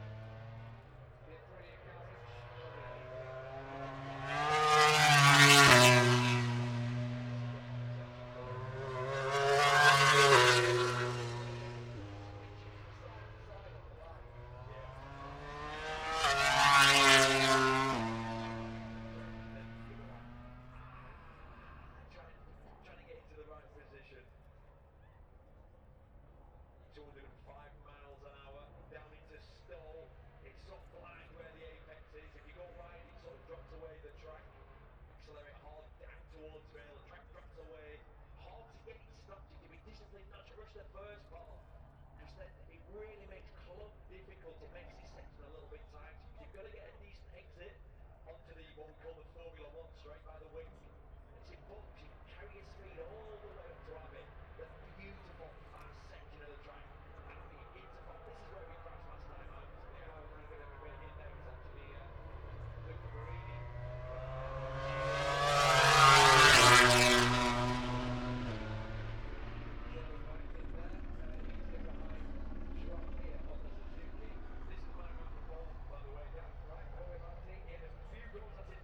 Silverstone Circuit, Towcester, UK - british motorcycle grand prix 2022 ... moto grand prix ...
british motorcycle grand prix 2022 ... moto grand prix qualifying two ... dpa 4060s on t bar on tripod to zoom f6 ...